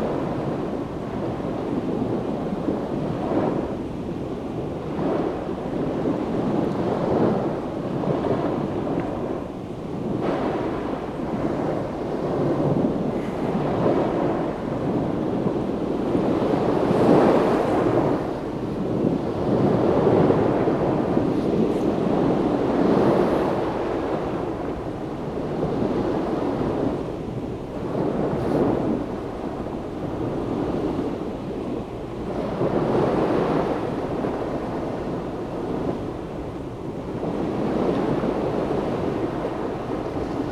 Località Baratti, Piombino LI, Italia - Waves of Tyrrhenian sea
Waves rolling onto beach. recorded during the night on the beach of Baratti, using a Tascam DR-70 with its internal microphones.
10 August, 02:50